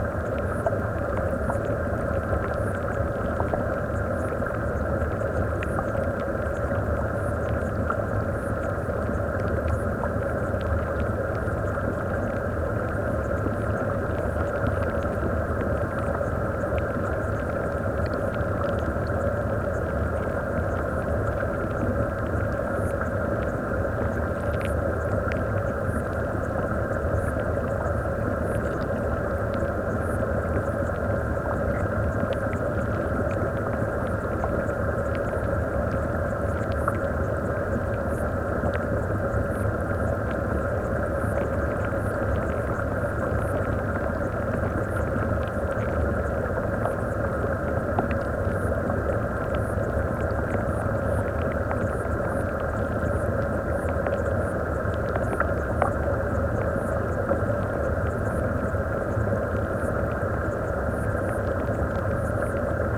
SBG, Gorg Negre - Torrent del Infern (hidro2)
Exploración con hidrófonos del torrente y la cascada.
20 July 2011, 15:07